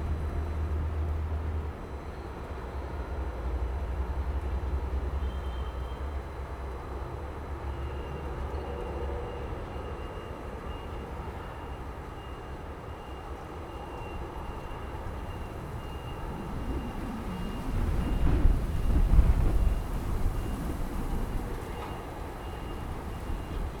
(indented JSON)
{
  "title": "大園區後厝里, Taoyuan City - Next to the airport",
  "date": "2017-01-04 10:14:00",
  "description": "Next to the airport, wind, take off\nZoom H2n MS+XY",
  "latitude": "25.09",
  "longitude": "121.23",
  "altitude": "22",
  "timezone": "GMT+1"
}